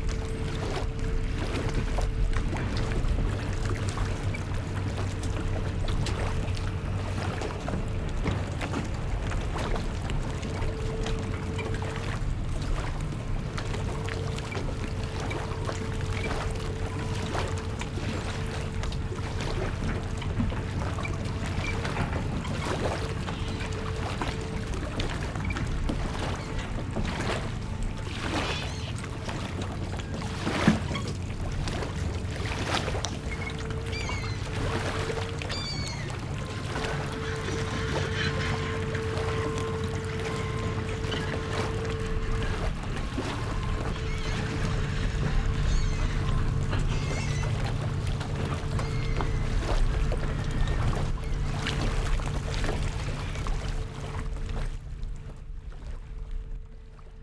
Red Flynn Dr, Beacon, NY, USA - Hudson River at Beacon Sloop Club
Sounds of the Hudson River at Beacon Sloop Club. Zoom H6